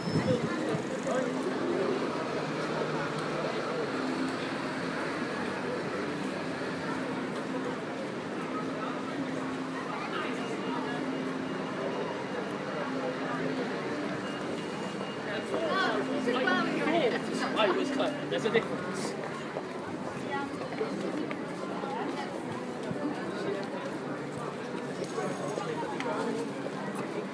Oxford, Oxfordshire, Reino Unido - Cornmarket Street
Oxford, Oxfordshire, UK, 14 August 2014, ~14:00